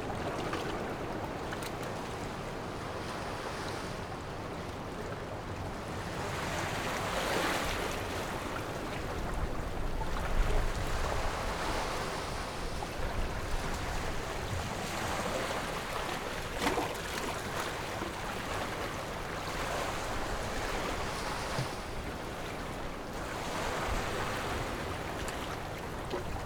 Jizazalay, Ponso no Tao - Waves and tides
Small pier, sound of the waves
Zoom H6 +Rode NT4